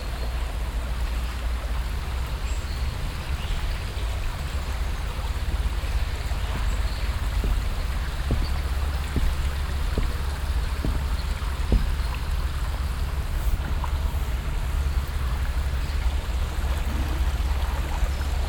refrath, stadtpark, holzbrücke - refrath, stadtpark, holzbrücke
morgens auf holzbrücke in kleinem stadtpark, fahrradfahrer und fussgänger überquerungen
soundmap nrw - social ambiences - sound in public spaces - in & outdoor nearfield recordings